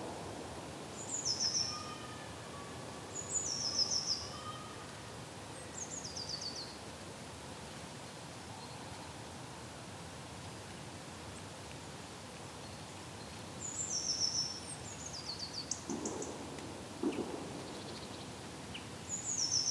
{
  "title": "Rixensart, Belgique - Winter into the forest",
  "date": "2019-01-20 14:00:00",
  "description": "During the winter, there's very few sounds in the Belgian forest. Birds are dumb. Here, we can hear a brave Great tit, a courageous Common chaffinch and some distant clay pigeon shooting. Nothing else, it's noiseless, but spring is coming up.",
  "latitude": "50.72",
  "longitude": "4.54",
  "altitude": "74",
  "timezone": "Europe/Brussels"
}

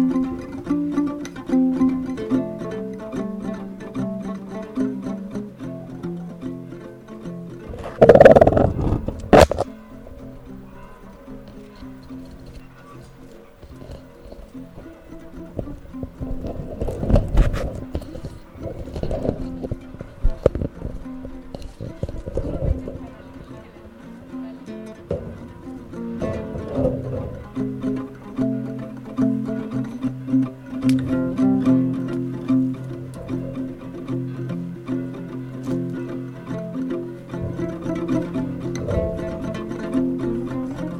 {
  "title": "kasinsky mic in the guitar, aia",
  "latitude": "42.83",
  "longitude": "13.74",
  "altitude": "225",
  "timezone": "GMT+1"
}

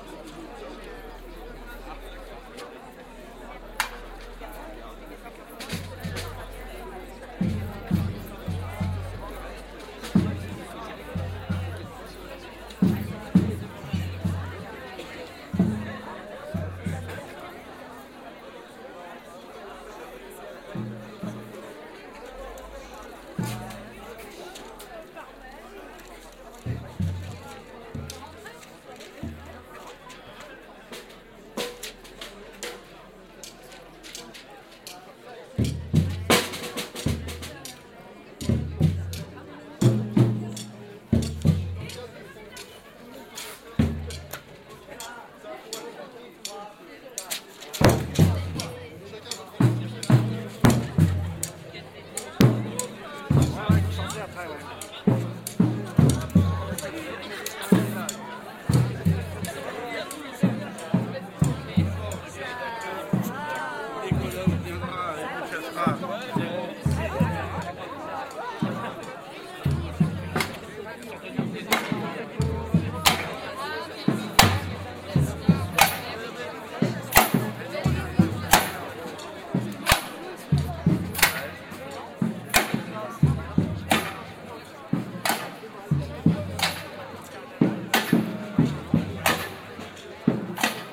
{
  "title": "Poitiers in front of the former Cinema - Demonstration against Privatisation",
  "date": "2013-02-16 14:30:00",
  "description": "The mayor want to privatise the former theater and now movie theater - shops are the new solution. A demonstration with a samba group has gathered.",
  "latitude": "46.58",
  "longitude": "0.34",
  "altitude": "121",
  "timezone": "Europe/Paris"
}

